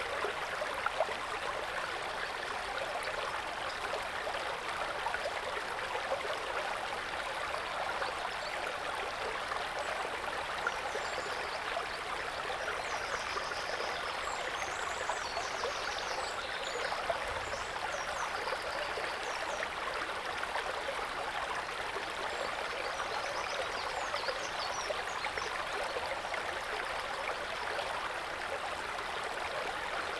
Malá Hraštice, Malá Hraštice, Czechia - Forest brook in spring
Voznický potok zurčing, birds singing on a sunny April day.
Recorded with Zoom H2n, 2CH, deadcat.